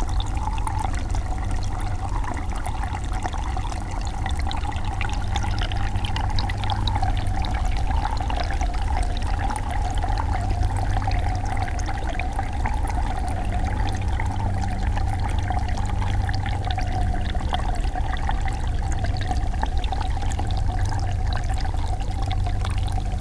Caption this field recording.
no snow today, but autum cold wind breaze and a little sun. within the green sandy grass of the plain ski slope a small water stream, soundmap international, social ambiences/ listen to the people - in & outdoor nearfield recordings